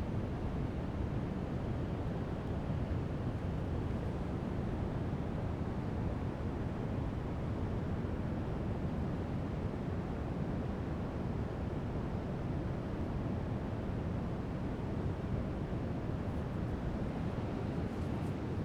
Nimrod Dam - Walking around Nimrod Dam
Walking around Nimrod Dam. I walk from the parking lot to the center of the dam and peer over the outflow side. Then I walk over to the inflow side of the dam and finally I walk off of the dam and sit on a bench on the outflow side of the dam. A C-130 makes a low pass early in the recording.